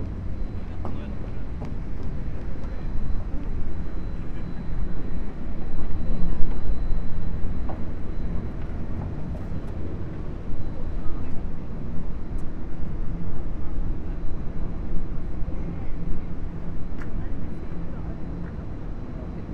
sonic scape of the city silenced by heavy machinery, which is located all around skydeck
Minato, Tokyo, Japan, 16 November, ~20:00